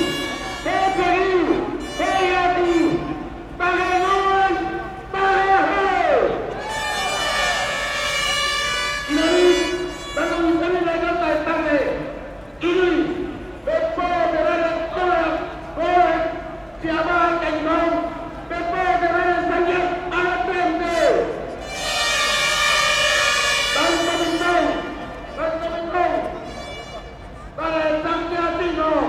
Sec., Jinan Rd., Zhongzheng Dist., Taipei City - Protests
Protest against U.S. beef, Rode NT4+Zoom H4n
中正區 (Zhongzheng), 台北市 (Taipei City), 中華民國, 8 March 2012, 11:21